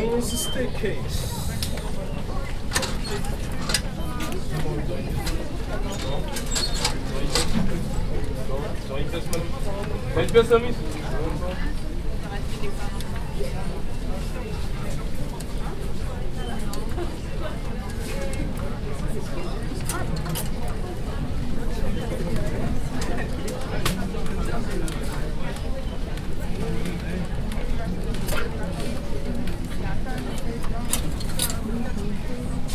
Paris, Chatelet - Les Halles, RER station, Ticket vending machine, crowd
Paris, France, 28 January